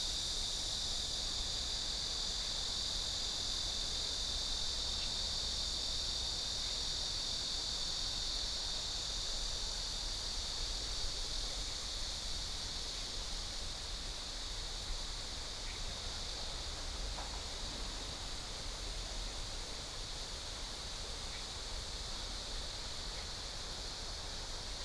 新街溪, Dayuan Dist. - On the river bank
On the river bank, Stream sound, Birds sound, Cicada cry, traffic sound, The plane flew through
Taoyuan City, Taiwan, 26 July 2017, ~11:00